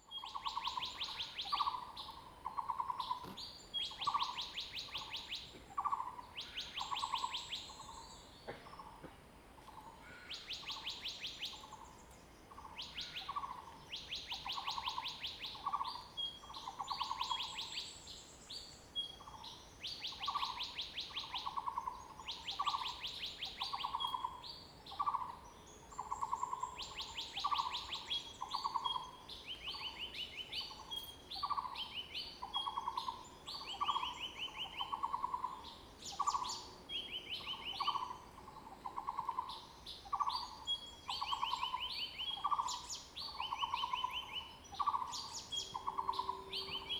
Birds singing, face the woods
Zoom H2n MS+ XY